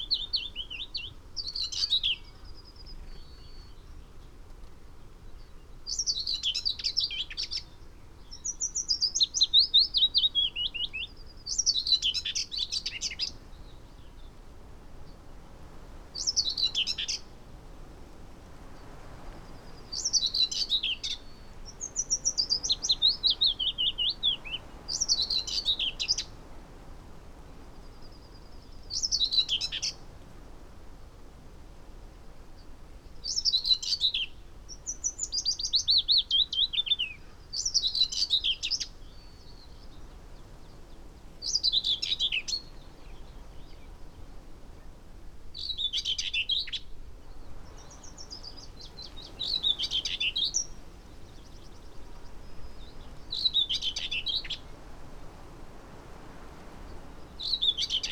Green Ln, Malton, UK - whitethroat song soundscape ... wld 2019 ...
Whitethroat song soundscape ... SASS on tripod ... bird song ... call ... from ... willow warbler ... song thrush ... carrion crow ... wren ... yellowhammer ... wood pigeon ... background noise ...
17 July 2019, 06:18